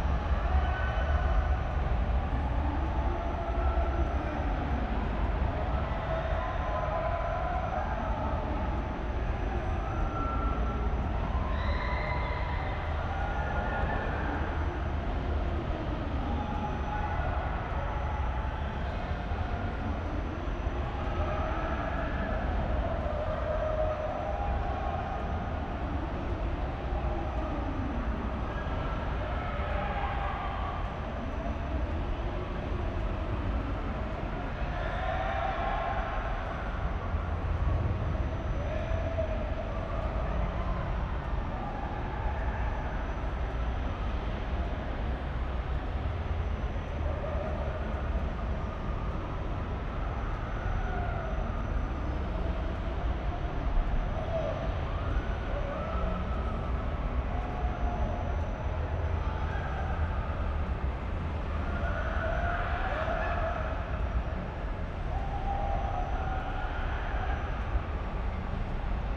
Berlin, Littenstr., courtyard
Berlin, Littenstr. courtyard, sounds and echos of the christmas fun fair vis-a-vis.
(tech note: SD702, NT1a A-B 60cm)